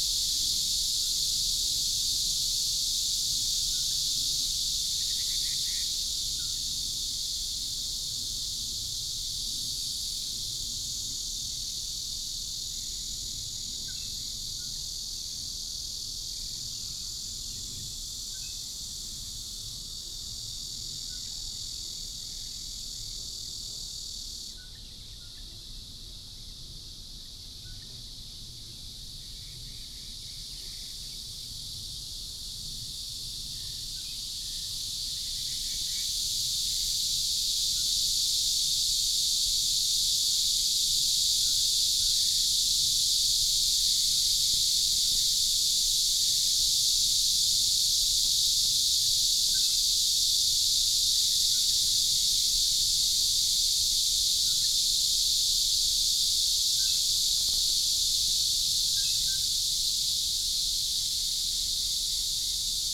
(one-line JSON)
{"title": "中路復育公園, Taoyuan Dist. - under the tree", "date": "2017-07-05 17:09:00", "description": "Cicadas and birds, under the tree", "latitude": "24.96", "longitude": "121.29", "altitude": "117", "timezone": "Asia/Taipei"}